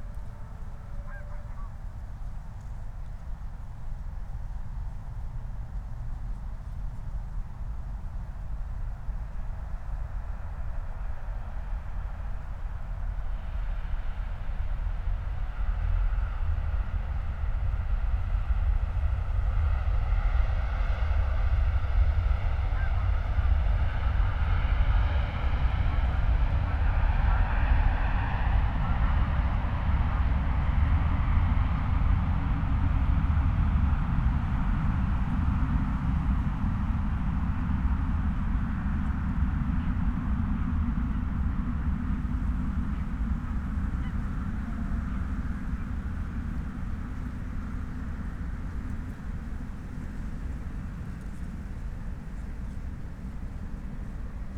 Moorlinse, Berlin Buch - near the pond, ambience
14:19 Moorlinse, Berlin Buch